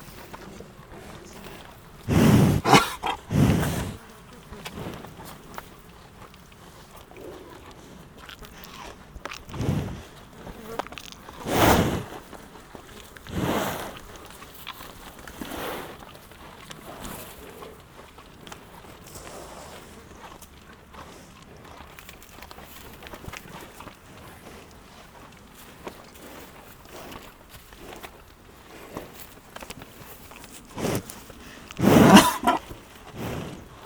Walking along a small road, some cows went to see us. We were their television ! I recorded 2 cows eating. Microphones are almost into the muzzle, it's disgusting !
31 July, 16:00